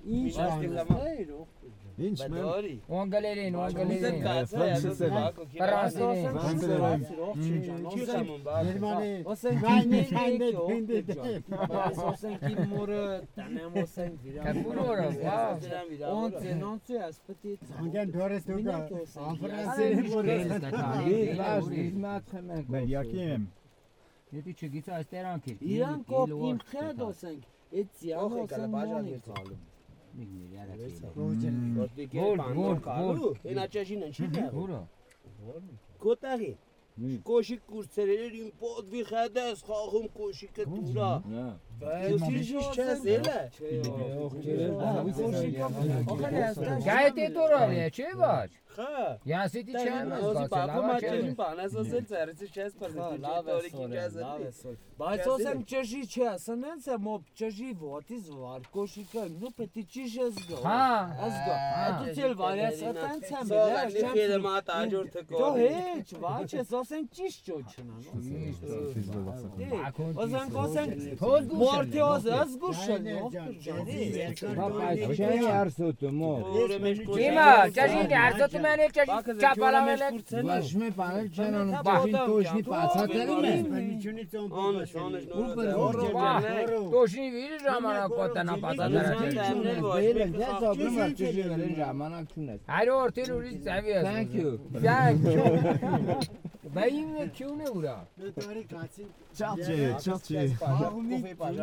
Erablur, Arménie - Farmers and the terrible Samo
A violent storm went on us. We saw a 4x4 car driving, the owner was searching us. In aim to protect us from the rain, he placed us in a small caravan, inside we were 11 persons ! It was so small that my feet were on another person. As Armenia is like this, these farmers shared with us vodka, cheese, tomatoes, cognac and coffee. This is the recording of the time we spent with them. The terrible farmer called Samo is speaking so loud ! It's a very friendly guy.
Armenia